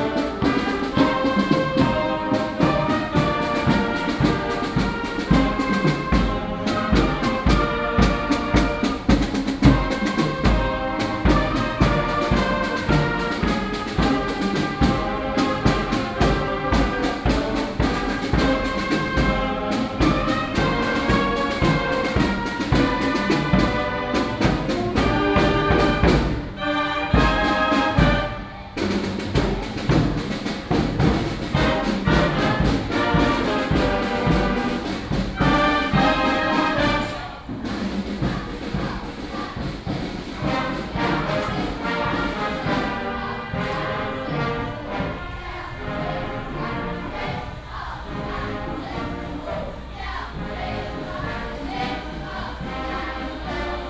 Yi 2nd Rd., Zhongzheng Dist., Keelung City - Festivals
Festivals, Walking on the road, Variety show, Keelung Mid.Summer Ghost Festival, Elementary school students show, Female high school music performers instrument